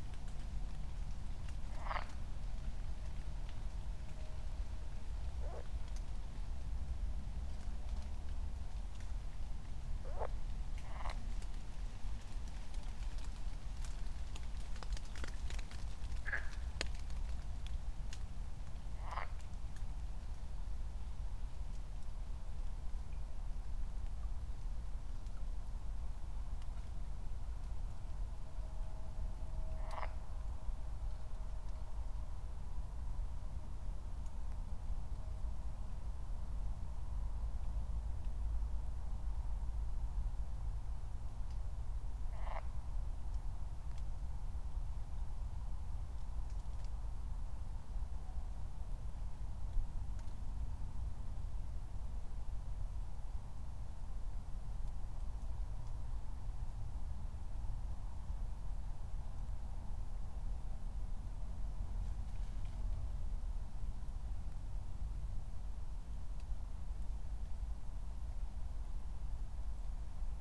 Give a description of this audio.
1:00 drone, raindrops, frogs, distant voices and music